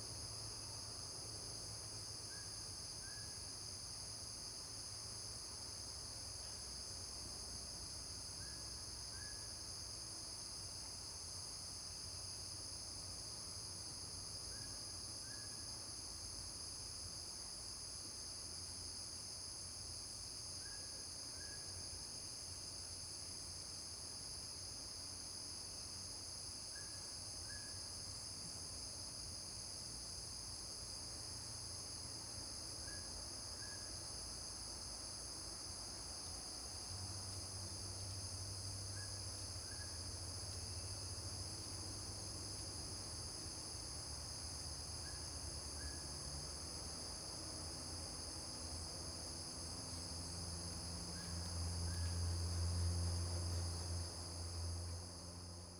Shuishang Ln., Puli Township - Insect and Birds
Insect calls, Birds call
Zoom H2n MS+XY